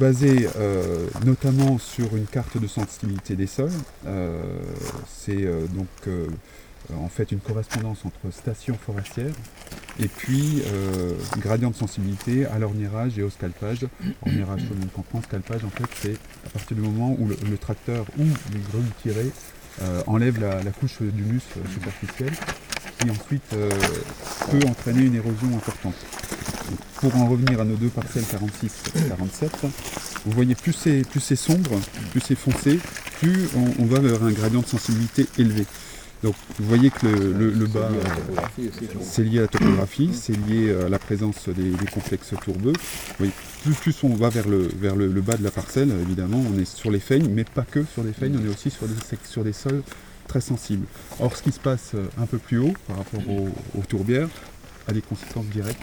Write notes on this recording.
Mr Laurent DOMERGUE, conservateur au Parc Régional des Ballons des Vosges, présente le plan du martelage des parcelles 46 & 47 de la Réserve Naturelle du Grand Ventron.